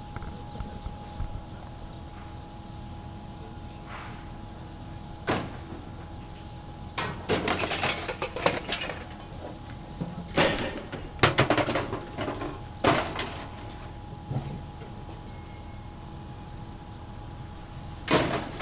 Nablus, Palestine, waste dump, man collecting scap metal